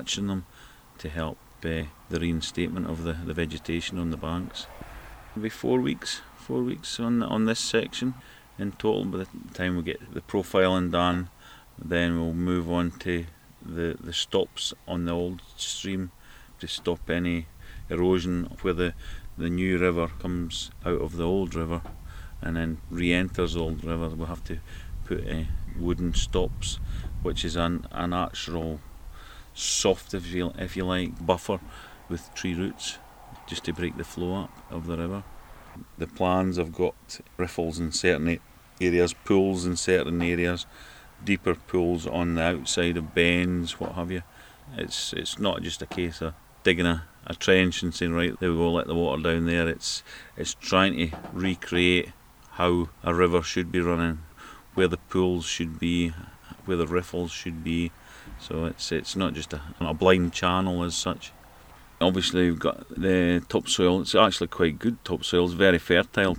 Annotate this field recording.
Field interview with Scott McColm, digger driver, who is reameandering a section of the Eddleston Water in the River Tweed catchment in the Scottish Borders. Scott talks about different types of land drainage and the qualities of silt, clay and gravel in the flood plain.